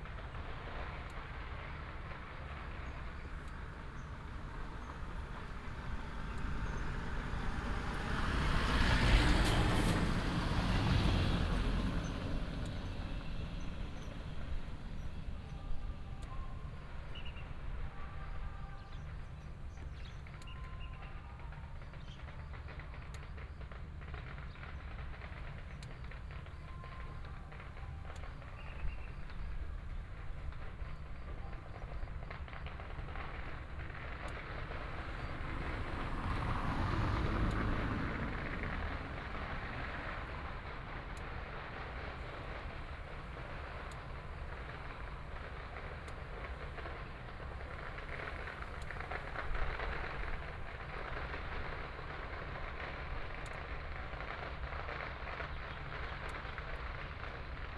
Dabu, Baozhong Township - Firecrackers and fireworks
Firecrackers and fireworks, sound of birds, Helicopter, Traffic sound
Baozhong Township, Yunlin County, Taiwan, 1 March 2017, 15:26